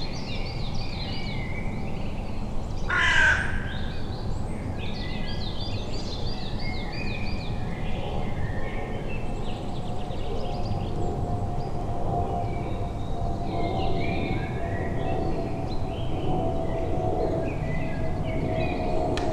Ленинский район, Московская область, Россия - Noise pollution.
Sony ECM-MS2 --> Marantz PMD-661 mod --> RX3(Declip, Limiter, Gain).